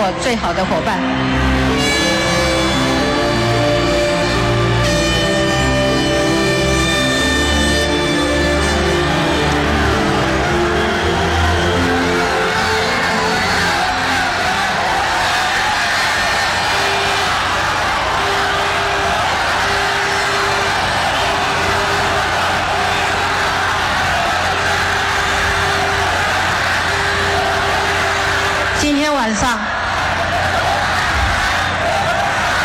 Banqiao, Taiwan - Concession speech
Taiwan's presidential election, Concession speech, Sony ECM-MS907, Sony Hi-MD MZ-RH1